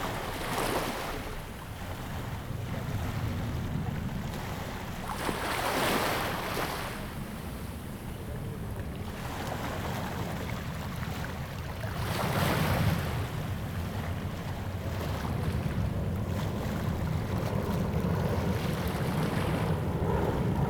六塊厝漁港, Tamsui Dist., New Taipei City - Small fishing pier
Sound of the waves, Small fishing pier
Zoom H2n MS+XY